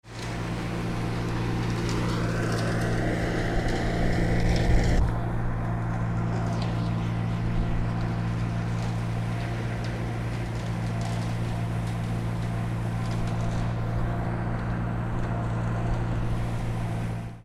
Betonmischer, Lago di Poschiavo, Le Prese